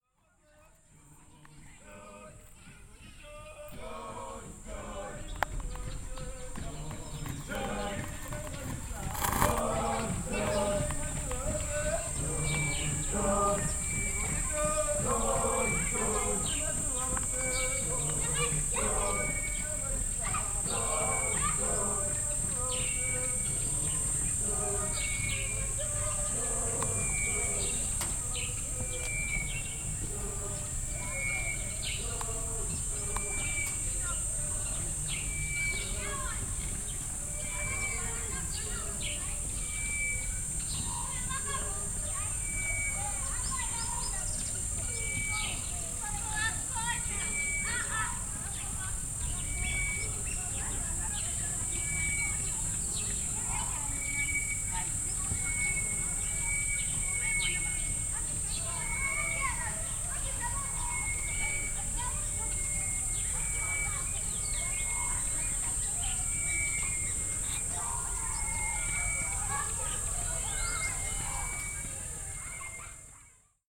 Tusimpe All Souls, Binga, Zimbabwe - soldiers passing...
…soldiers passing by Tusimpe Mission in early morning…